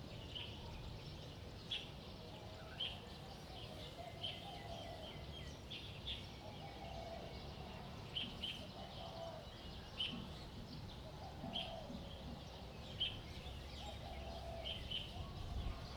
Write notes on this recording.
small village morning, birds chirping, Traffic sound, chicken crowing, Zoom H2n MS+XY